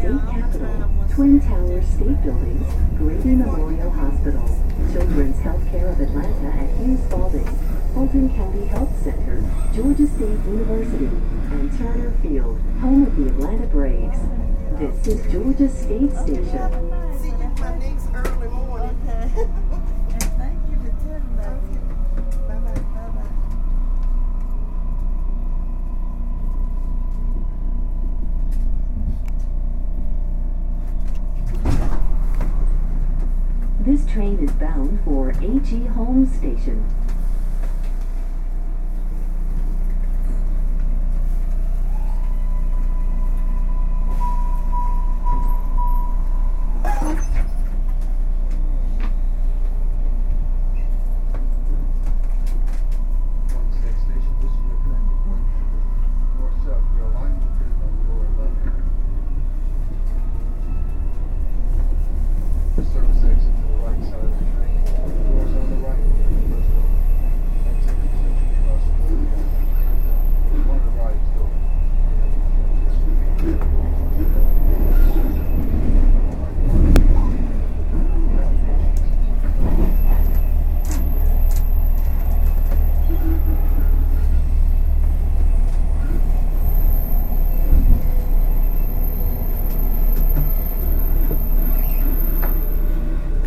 Atlanta, East Lake
MARTA East Lake to North Avenue 10/20/09 0637am